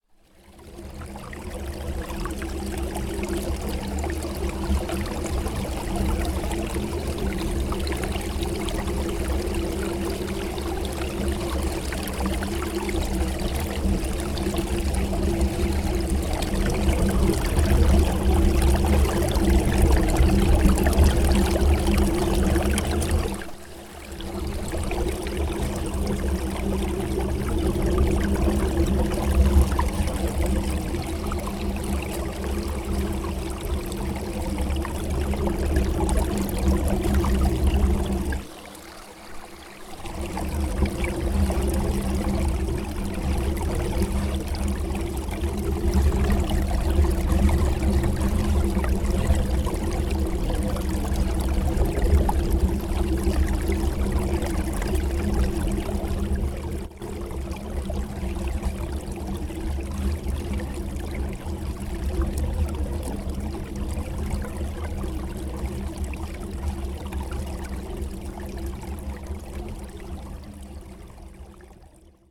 Franklin Ave, Nelsonville, OH, USA - BuchtelOhioLocalSpring
A spring on private land where local residents come to fill jugs of drinking water. The spring pours from 3 clay pipes coming out of concrete face, into concrete trough with drain in middle. It's a very large spring said to be draining from an old flooded mine and may contain high levels of aluminum.
28 September 2019